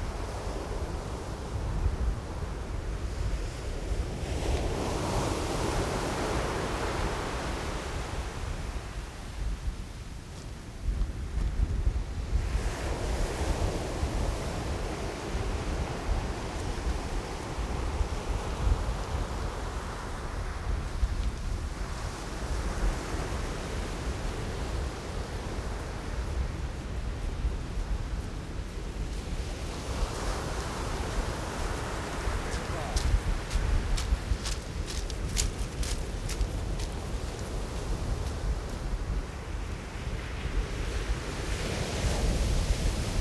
Sound of the waves, Sandy beach, Seaside, Zoom H6 M/S
17 January 2014, 13:52